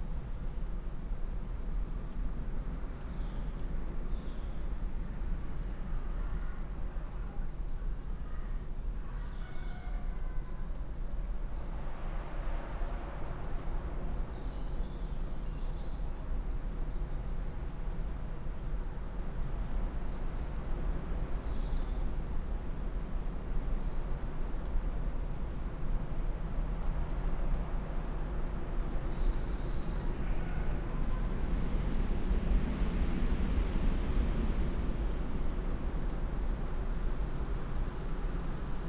Off Main Street, Helperthorpe, Malton, UK - churchyard soundscape ...
church yard soundscape ... SASS ... bird calls from ... blackbird ... crow ... robin ... wren ... pheasant ... great tit ... tree sparrow ... long-tailed tit ... coal tit ... collared dove ... chaffinch ... wood pigeon ... treecreeper ... background noise ... dry leaves blown around ...